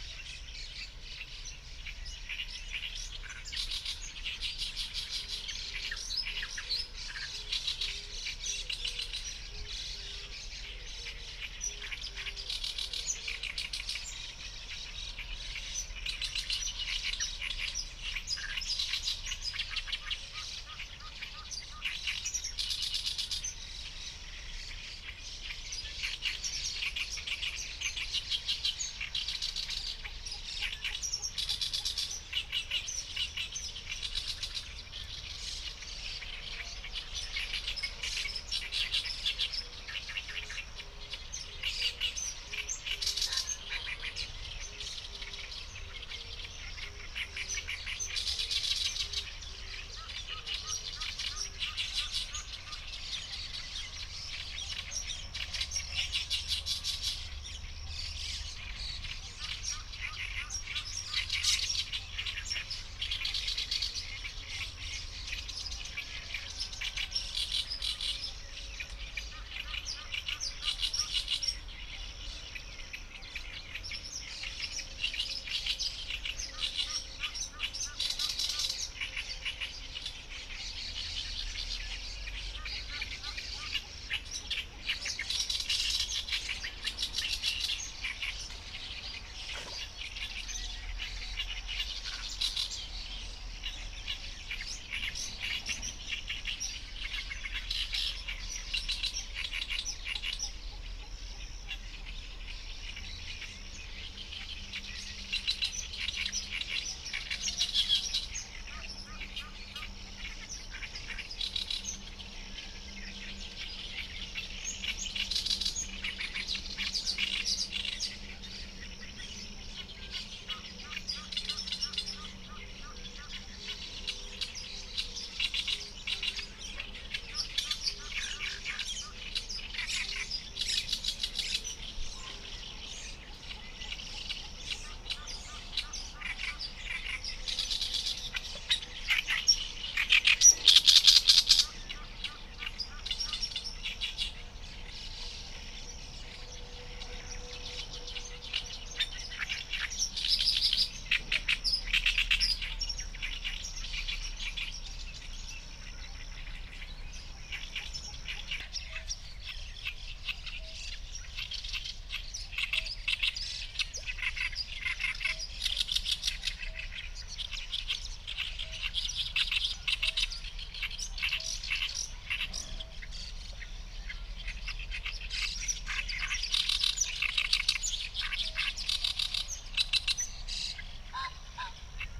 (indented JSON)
{
  "title": "Dawn Chorus at a small remaining wetland on 상중도 Sang Jung Island",
  "date": "2018-06-17 05:15:00",
  "description": "Surrounded by farmland there is a small area of wetland (slow moving fresh water) on Sang Jung Island...the bird, amphibian and insect activity is quite dense...however there is also human-made noise from nearby roads and urban areas.",
  "latitude": "37.90",
  "longitude": "127.72",
  "altitude": "76",
  "timezone": "Asia/Seoul"
}